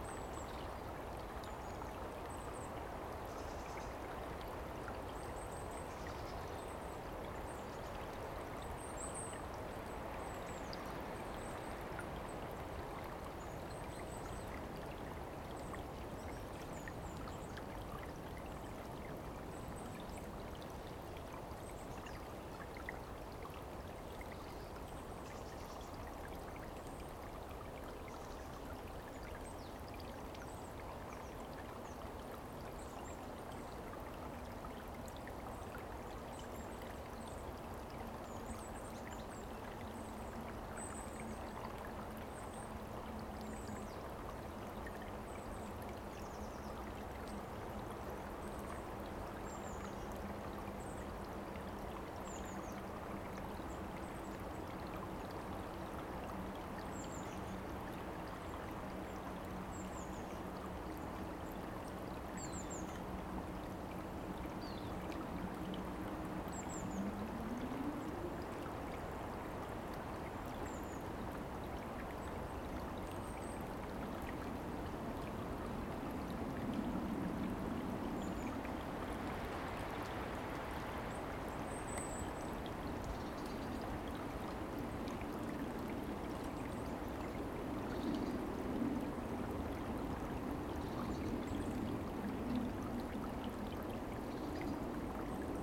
Hågadalen-Nåsten, near Stabbymalm, Uppsala, Sweden - birds singing near streamlet in Håga forest
a warm day in February. recorded with H2n set on a treestump, 2CH mode
Svealand, Sverige, February 2020